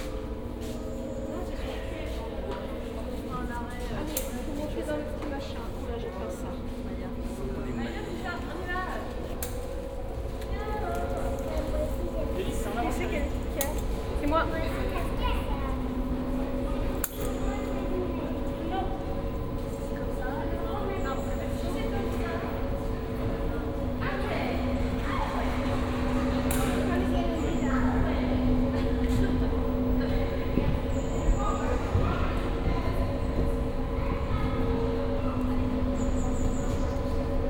taormina cableway - mazzaro station
this cableway connects taormina with mazzaro bay. max 8 persons per cabin. people awaiting lift to taormina.
Taormina ME, Italy, November 2009